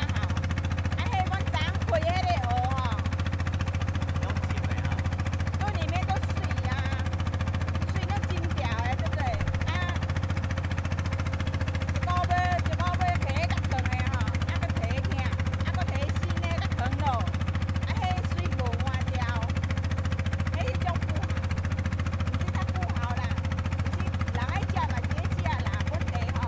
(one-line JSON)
{"title": "Changhua, Taiwan - the truck traveling at sea", "date": "2014-03-09 10:14:00", "description": "Small truck traveling at sea, The sound of the wind, Oysters mining truck, Very strong winds weather\nZoom H6 MS", "latitude": "23.93", "longitude": "120.30", "timezone": "Asia/Taipei"}